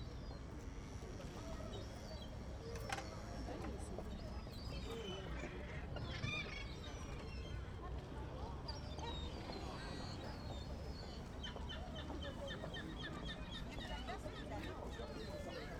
marseille marché aux poissons
prise de son au zoom H2 dimanche 24 janvier 2010 au matin vieux port de marseille